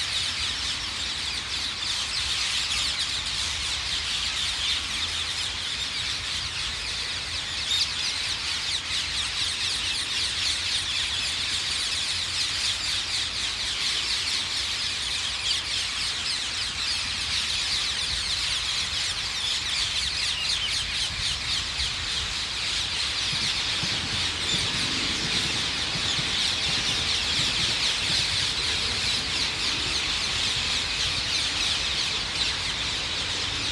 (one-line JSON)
{"title": "Garden of Remembrance, London Borough of Lewisham, London, UK - Intense Parakeet Roost: Hither Green Cemetery", "date": "2013-08-14 20:30:00", "description": "Thousands of Ring-necked Parakeets gather to roost in this line of poplar trees in Hither Green Cemetery. The sound is reminiscent of Hitchcock's 'The Birds'. They begin to fly in about an hour before sunset and keep up this intense conversation until they gradually quieten as night falls.", "latitude": "51.44", "longitude": "0.01", "altitude": "40", "timezone": "Europe/London"}